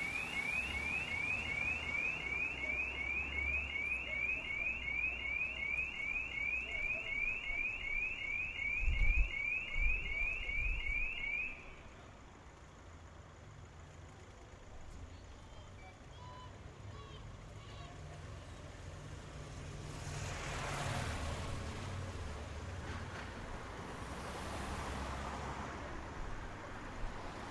Sunday afternoon, recorded from the window of my flat.